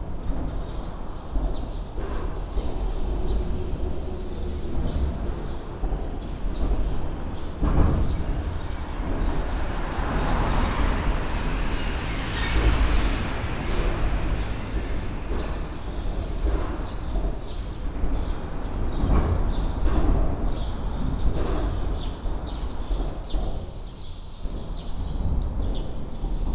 縣道156, 鹿場里, Xiluo Township - Under the highway
Under the highway, Traffic sound, sound of birds